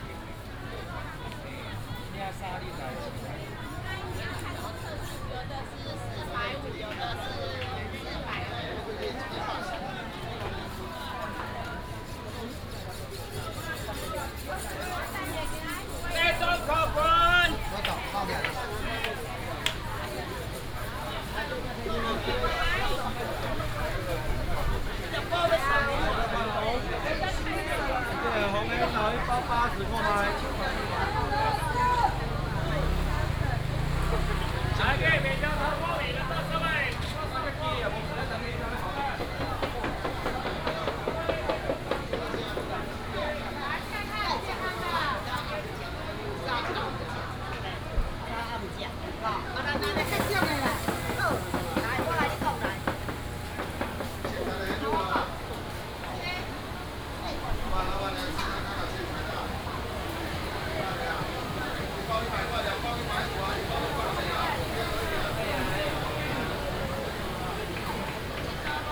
Traditional market, vendors peddling, traffic sound